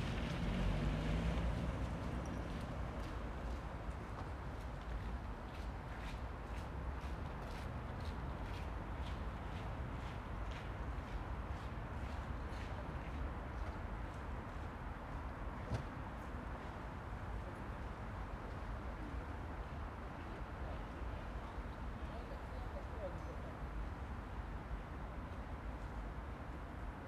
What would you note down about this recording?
Surrounded by high derelict building, with crumbling white concrete this square bizarrely has a covered raised area in its midst. Unclear what for. A large drinks lorry finishes its delivery and drives off. A couple embrace, kissing passionately at length, under trees along the edge. The building site workers are stopping for the day, dragging barriers across the entrances and locking them. They pass bu chatting towards their cars.